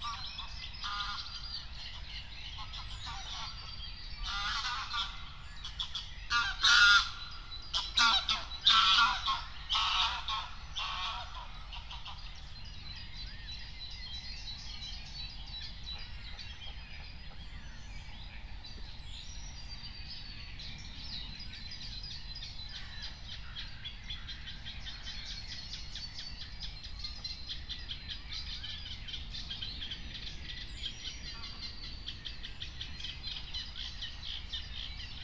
04:50 Berlin, Buch, Mittelbruch / Torfstich 1 - pond, wetland ambience
Deutschland, 16 May